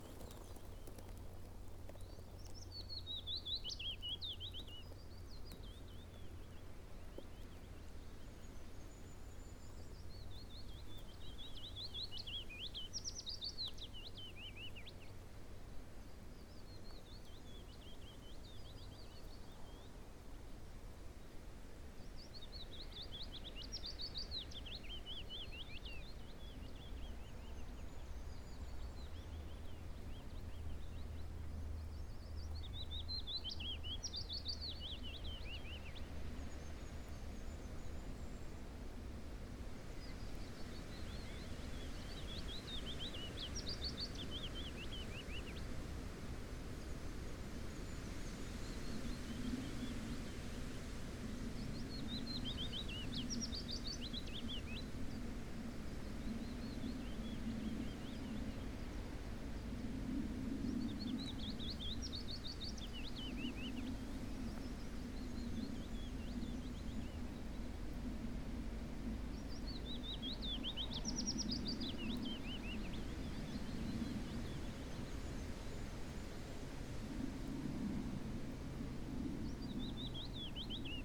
Mansbrook Wood, Woods Corner, East Sussex - Willow Warblers
Willow Warblers recorded at Mansbrook Wood near Wood's Corner, East Sussex. Tascam DR-05